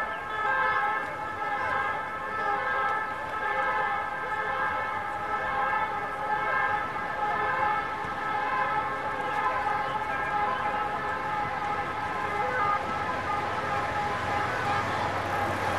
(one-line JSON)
{"title": "Bach Felippe de Roda Bridge, Barcelona Siren", "latitude": "41.42", "longitude": "2.19", "altitude": "22", "timezone": "GMT+1"}